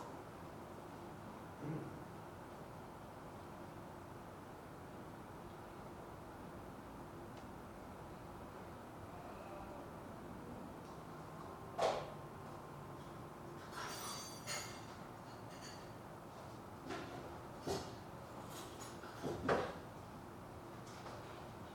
La Vila de Gràcia, Barcelona, Spain - Patio Sounds, water, distant traffic, kitchen
sound of a very small "Patio" / backyard